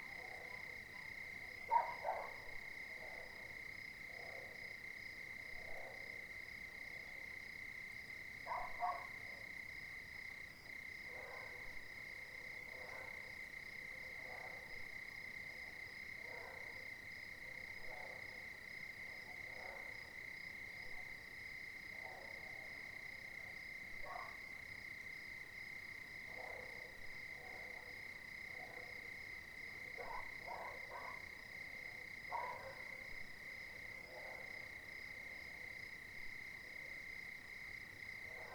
J'ai profité pour cette prise de son, que le chien le plus proche se taise, laissant entendre les grillons et ceux qui sont plus loin
Le quartier est envahi de chiens, il est très rare d'avoir la paix la nuit. je ne dors plus la fenêtre ouverte: pour ne pas être réveillé être tout fermé ne suffit pas, si le chien le plus proche aboie, c'est 110dB qui tapent au mur de la maison et le béton n'isole pas, même avec doublage intérieur et double fenêtre il en reste assez pour réveiller: il faut être fermé, et en plus avec de la mousse dans les oreilles: avec la chaleur c'est étouffant. Pour cet enregistrement, les chiens proches ont jappé une demi heure avant, et calmés, laissent entendre ceux de la cité, qui eux ont commencé à midi samedi, jusqu'à la fin de nuit de dimanche.